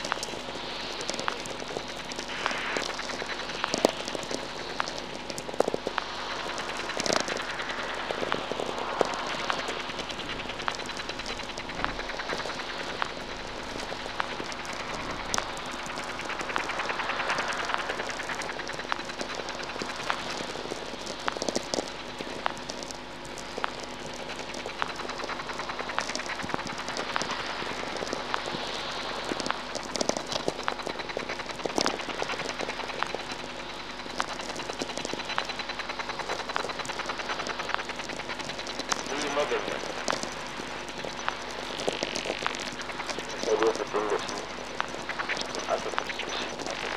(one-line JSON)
{"title": "I.S.T/VLF and sw radio shooting star night in Fiac", "date": "2009-08-12 22:00:00", "description": "field recording of Very low frequency mix with sw radio during the perseides night, the night of shooting star.", "latitude": "43.70", "longitude": "1.89", "altitude": "210", "timezone": "Europe/Paris"}